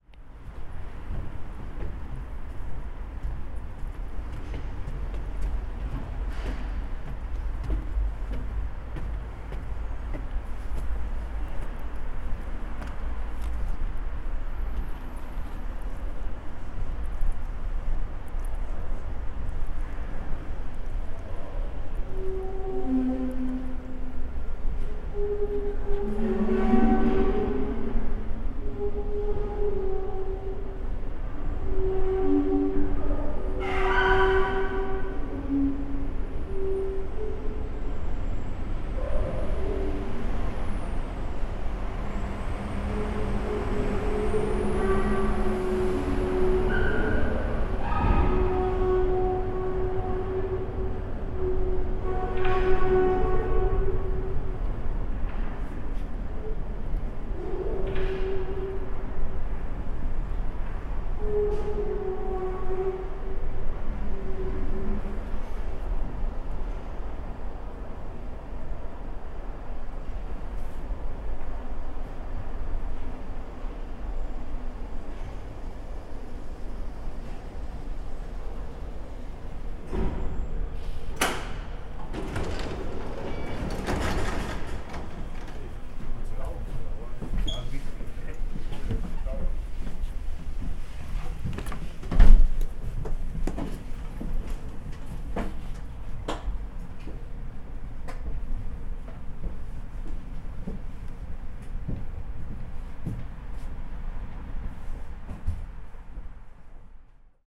Vohwinkel-Mitte, Wuppertal, Deutschland - Schwebebahn Station Vohwinkel
Waiting in the station on the next train. Train is turning around in the station.
Nordrhein-Westfalen, Deutschland, European Union, 11 July 2013, 07:39